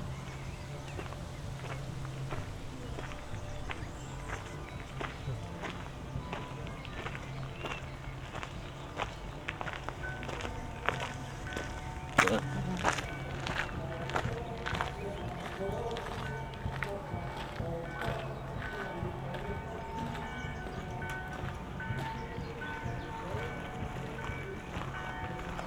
Maribor, Piramida - pentecoste sunday soundscape
below Piramida, a little chapel on the vineyard hills above Maribor, a really bad band is playing in the distant center of town, various churchbells come in, bikers and pedestrians climbing up the hill, wind.
(SD702, AT BP4025)
Maribor, Slovenia